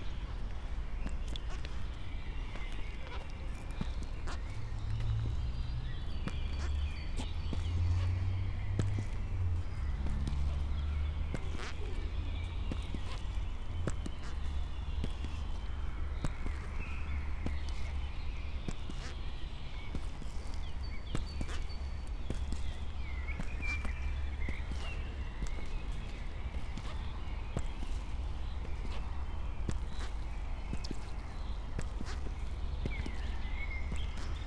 inside the pool, mariborski otok - white dots, walking
blue deepens with dark clouds below puddles, pink raincoat with white dots, who would imagine more disturbing creature in this greenish-scape ...